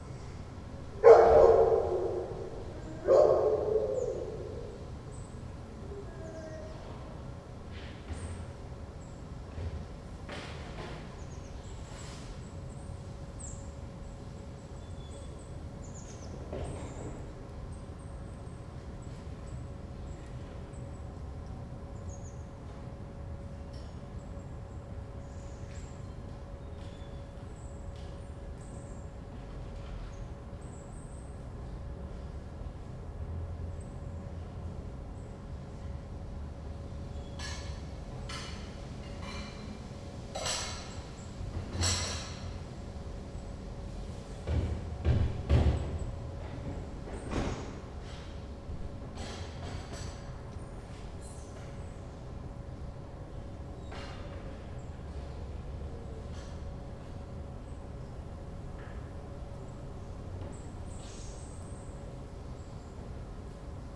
Berlin-Friedrichshain, Berlijn, Duitsland - Patio with howling dog
Recorded from the bathroom of a rented apartment. The dog howled and barked all day.
Zoom H2 internal mics.